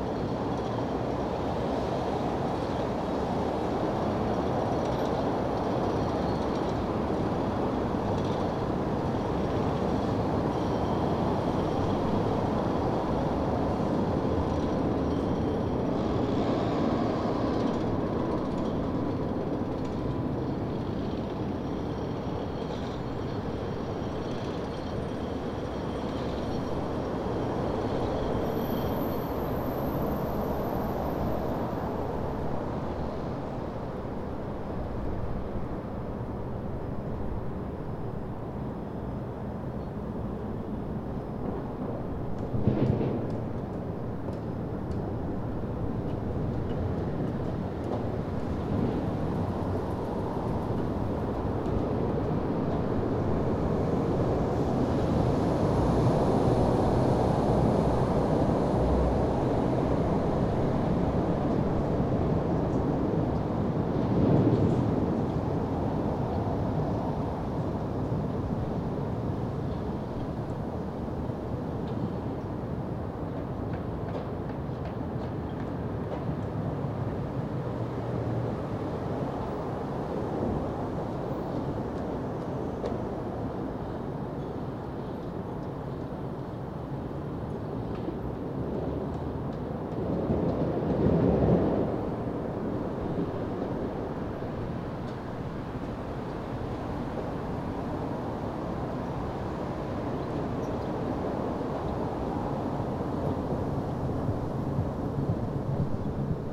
Johannisplatz 18 - Leipzig, Germany - the burial site of Sebastian Bach in the COVID-19 Pandemic
Recorded (with a Tascam DR-100 mkIII) inside the circle that marks the burial site of Sebastian Bach.
I made very small edits mainly to erase wind.
This is usually one of the busiest streets in Leipzig and it's now running on minimal levels but still... Because of the COVID-19 pandemic i was expecting it to be really quiet...
Listen to it, understand your center, stay calm.
Sachsen, Deutschland, 27 March 2020